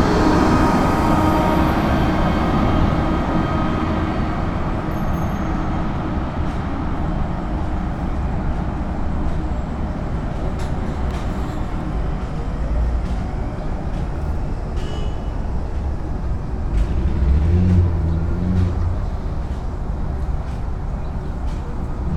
Millennium Park, Chicago, IL, USA - Soundwalk from Lurie Garden to Randolph Street
Soundwalk from Lurie Garden to Randolph Street. Includes sounds of birds and pedestrians in the garden, street traffic, and Grant Park Orchestra concert at Pritzker Pavilion.